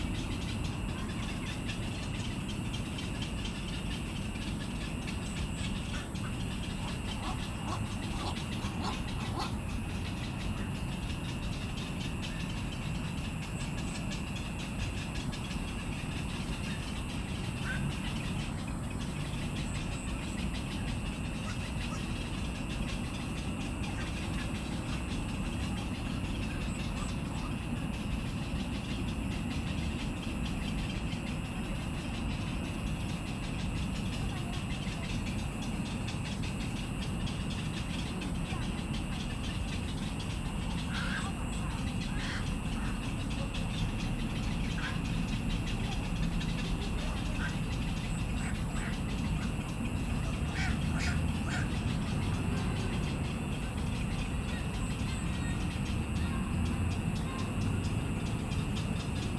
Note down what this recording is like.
Next to the ecological pool, Bird sounds, Voice traffic environment, Zoom H2n MS+XY+Sptial audio